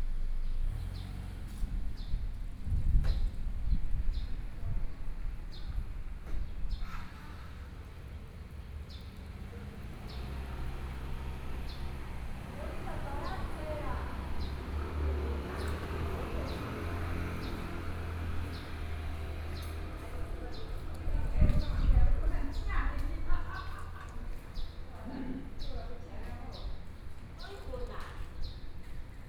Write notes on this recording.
In the temple plaza, Traffic Sound, Birdsong, Small village, Hot weather